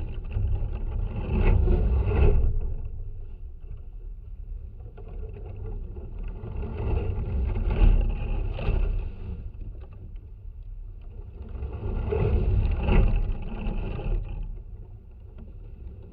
Libertava, Lithuania, abandoned mansion contact
abandoned wooden mansion. contact microphones placed between the boards of old stairs. there;s strong wind outside, so the house is alive...
Utenos apskritis, Lietuva, 27 October 2019, 13:10